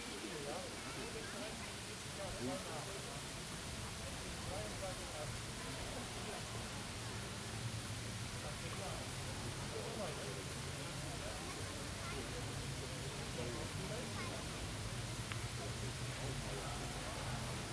Rudolph-Wilde-Park, Berlin, Deutschland - Goldener Hirsch
Sonne, Leute beim Bowlen, Familien & der Brunnen im Hintergrund.
Berlin, Germany